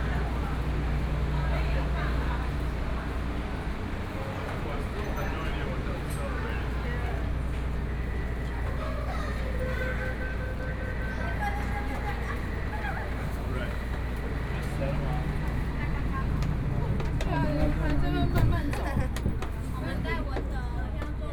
Wende Station, Taipei City - the MRT station
Walking into the MRT station
Please turn up the volume a little. Binaural recordings, Sony PCM D100+ Soundman OKM II